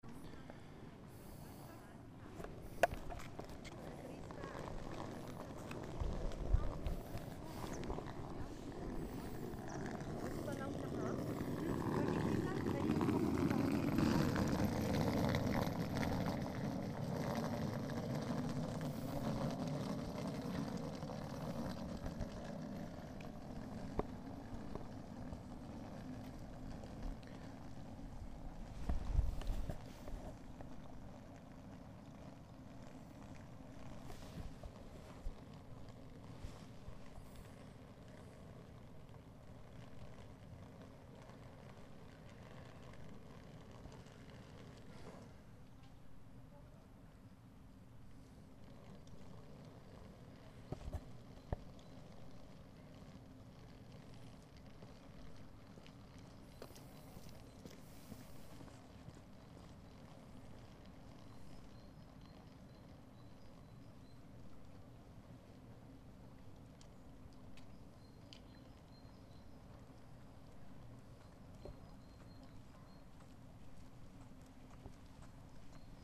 auf einem Parkweg des Campus' der Donau-Universität Krems, vor der ersten Vorlesung.